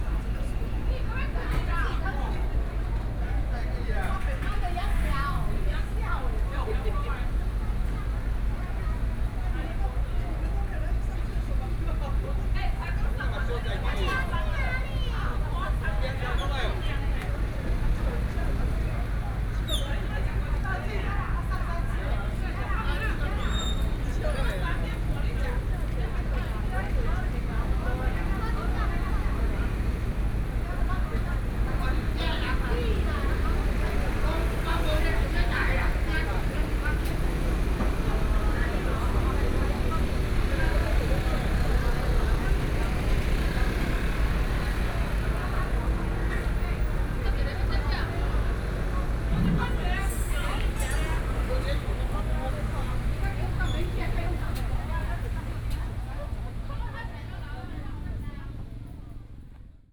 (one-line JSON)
{"title": "Gangbian Rd., Chenggong Township - walking on the Road", "date": "2014-09-06 15:22:00", "description": "In the fishing port, Traffic Sound, The weather is very hot", "latitude": "23.10", "longitude": "121.38", "altitude": "5", "timezone": "Asia/Taipei"}